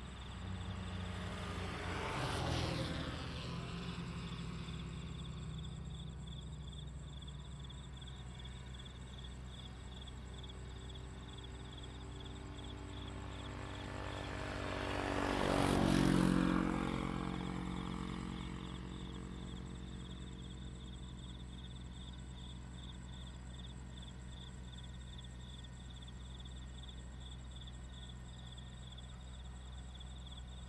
{"title": "楊梅區民富路一段, Taoyuan City - Insect and Traffic sounds", "date": "2017-08-11 19:17:00", "description": "Next to the railroad tracks, Traffic sound, The train runs through\nZoom H2n MS+XY", "latitude": "24.92", "longitude": "121.12", "altitude": "141", "timezone": "Asia/Taipei"}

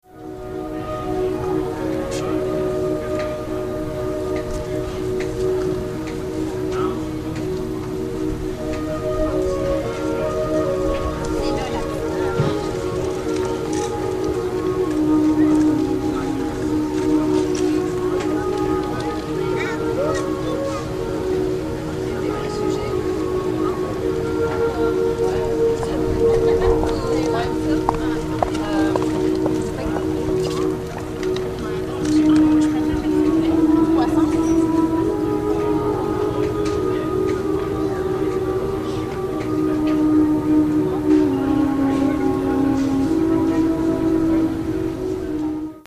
{"title": "Montreal: Jean-Talon Market - Jean-Talon Market", "date": "2009-05-30 12:30:00", "description": "equipment used: marantz\nguy playing flute outside at the Jean-Talon Market", "latitude": "45.54", "longitude": "-73.62", "altitude": "52", "timezone": "America/Montreal"}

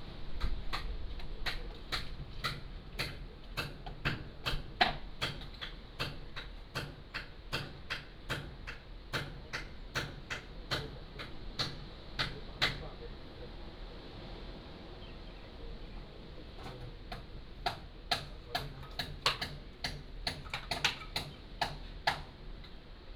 {"title": "芹壁村, Beigan Township - Renovated house", "date": "2014-10-13 16:17:00", "description": "Renovated house, Old house, Sound of the waves", "latitude": "26.22", "longitude": "119.98", "altitude": "32", "timezone": "Asia/Taipei"}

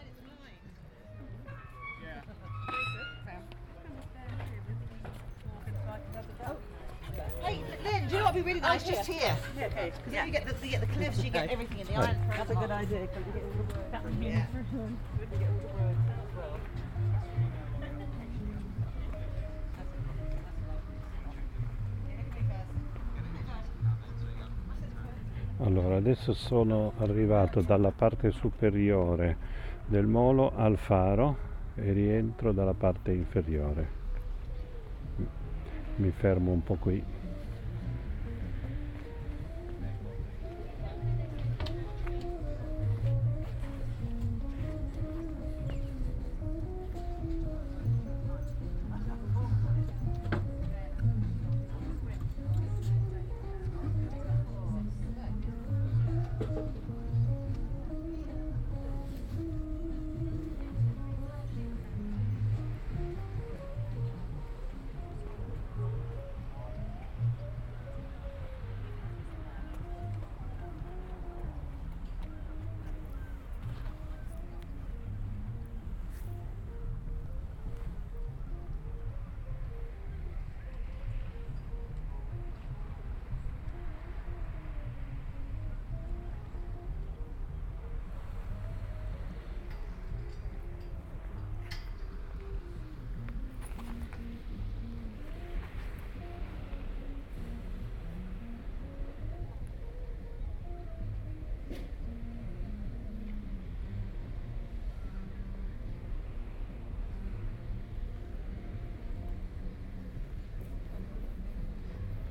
{"title": "Unnamed Road, Folkestone, Regno Unito - GG Folkestone-Harbour-B 190524-h14-10", "date": "2019-05-24 14:10:00", "description": "Total time about 36 min: recording divided in 4 sections: A, B, C, D. Here is the second: B.", "latitude": "51.08", "longitude": "1.19", "altitude": "8", "timezone": "Europe/London"}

